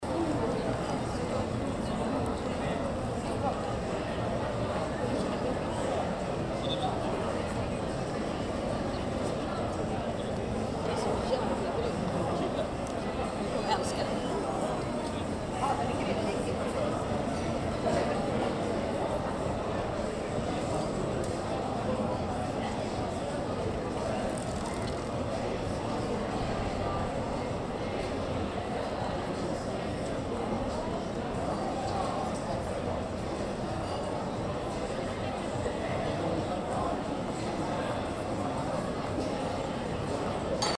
Götgatan, Evening
Götgatan in the middle of the street for World Listening Day 2011.